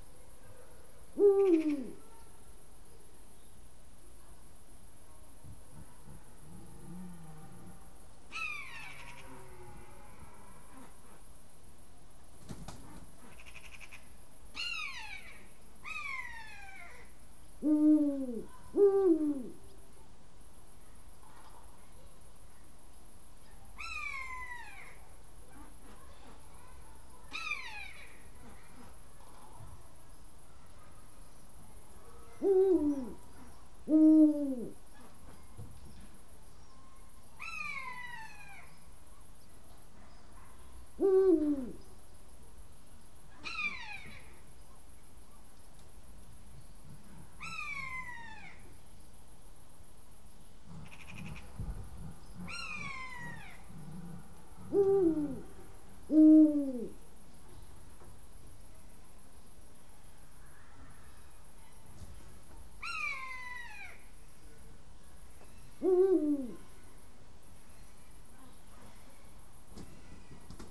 Gruta, Lithuania
little zoo's inhabitants, eagle-pwl